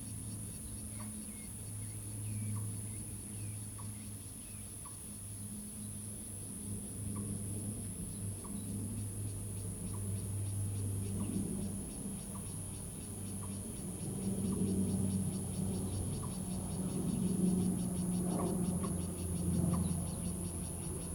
羅浮壽山宮, Fuxing Dist., Taoyuan City - Small temple
Small temple, Bird call, Cicada sound, The plane flew through
Zoom H2n MS+XY